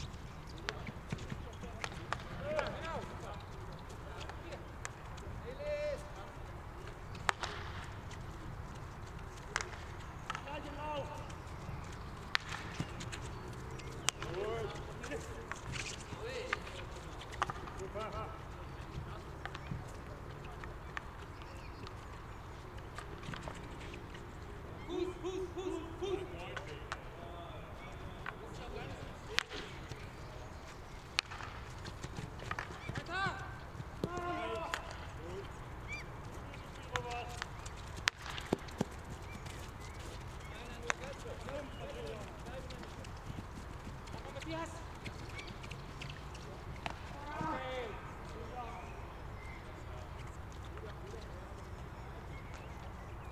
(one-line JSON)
{"title": "Ravensberg, Kiel, Deutschland - Field hockey training", "date": "2017-04-14 19:08:00", "description": "Field hockey training (parents team) for fun in the evening\nZoom F4 recorder, Zoom XYH-6 X/Y capsule, windscreen", "latitude": "54.34", "longitude": "10.11", "altitude": "18", "timezone": "Europe/Berlin"}